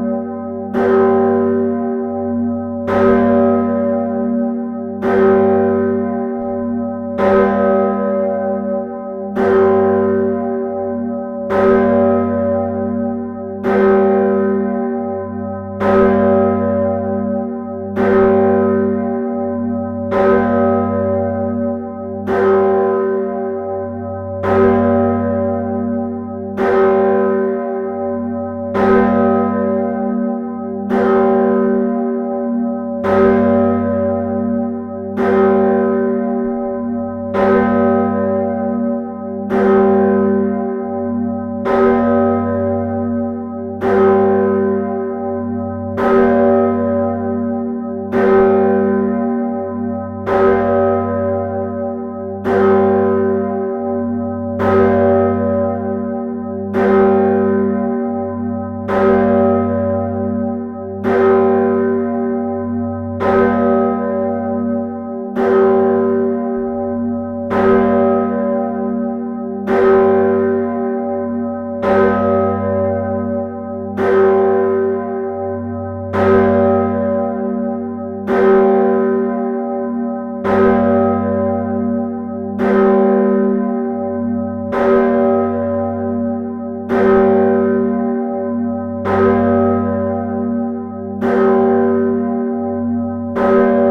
Bruxelles, Belgique - Brussels big bell
The Brussels big bell, called Salvator.
This is a 1638 bell made by the bellfounder Peeter Vanden Gheyn.
The ringing system is very old. Renovating it would be a must.
We ringed Salvator manually the 11/11/11 at 11h11.
Thanks to Thibaut Boudart welcoming us !
Place Sainte-Gudule, Bruxelles, Belgium